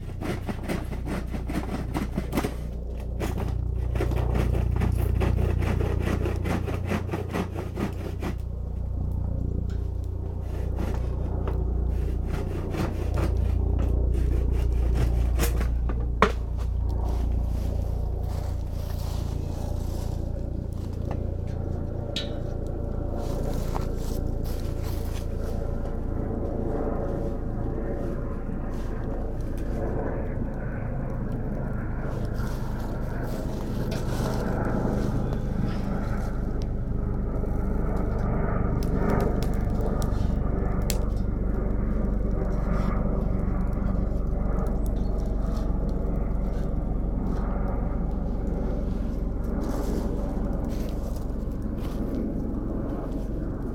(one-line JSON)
{"title": "Spadelsberg, Neuffen, Deutschland - fireplace", "date": "2020-12-04 15:00:00", "description": "Sony PCM-D50, (pseudo) ORTF\nPreparing the fireplace, listen the crackly fire", "latitude": "48.54", "longitude": "9.37", "altitude": "492", "timezone": "Europe/Berlin"}